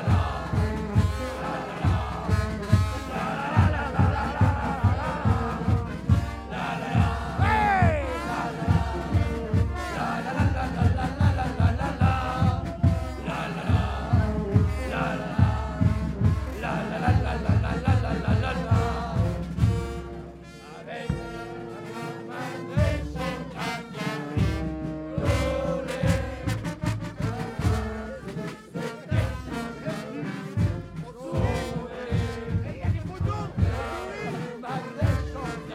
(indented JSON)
{
  "title": "All. du 8 Mai, Dunkerque, France - Mardyck - Carnaval de Dunkerque",
  "date": "2020-02-15 14:30:00",
  "description": "Dans le cadre du Carnaval de Dunkerque - Bourg de Mardyck (Département du Nord)\nBande (défilée) de Mardyck",
  "latitude": "51.02",
  "longitude": "2.25",
  "altitude": "4",
  "timezone": "Europe/Paris"
}